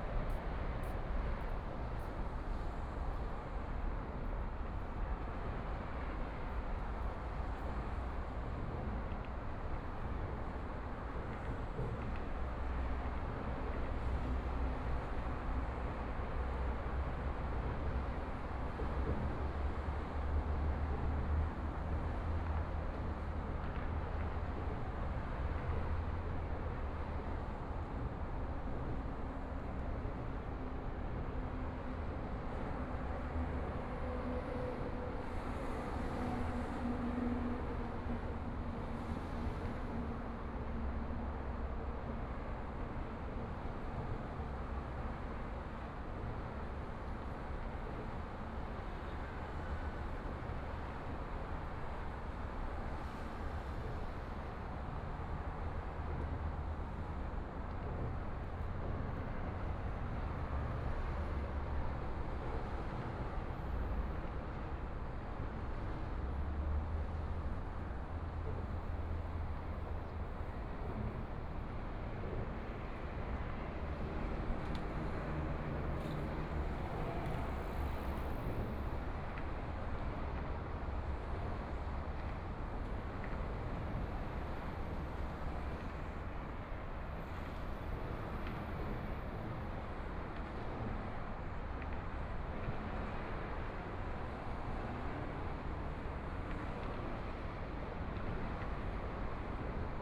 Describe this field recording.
Holiday, Standing beneath the MRT tracks, Sunny mild weather, Traffic Sound, Aircraft flying through, MRT train sounds, Sound from highway, Binaural recordings, ( Proposal to turn up the volume ), Zoom H4n+ Soundman OKM II